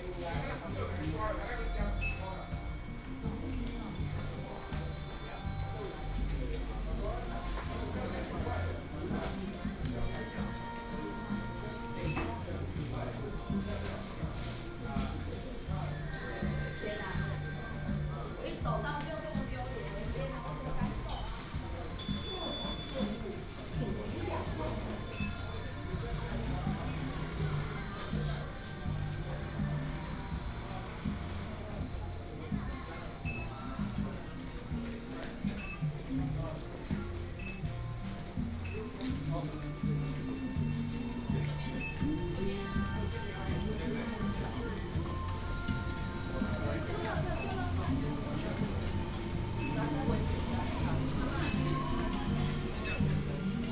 Longjing Station, 台中市龍井區龍泉里 - In the station hall
In the station hall
Longjing District, Taichung City, Taiwan